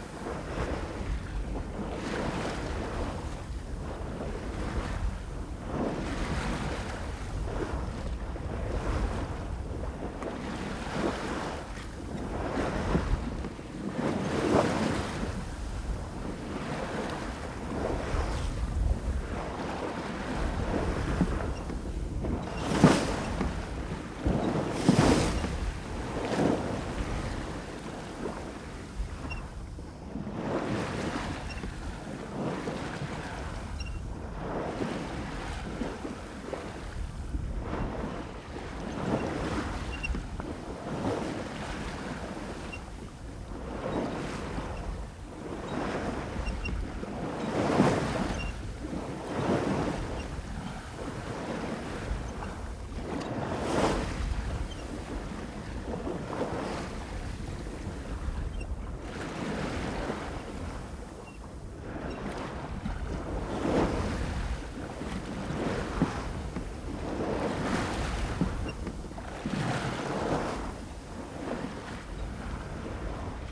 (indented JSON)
{
  "title": "Plouézec, France - Navigation voilier - 23.04.22",
  "date": "2022-04-23 12:19:00",
  "description": "Navigation en voilier au large de Paimpol. Allure du prêt, mer relativement calme. Enregistré avec un coupe ORTF de Sennheiser MKH40 coiffées de Rycote Baby Ball Windjammer et d'une Sound Devices MixPre3.",
  "latitude": "48.79",
  "longitude": "-2.94",
  "timezone": "Europe/Paris"
}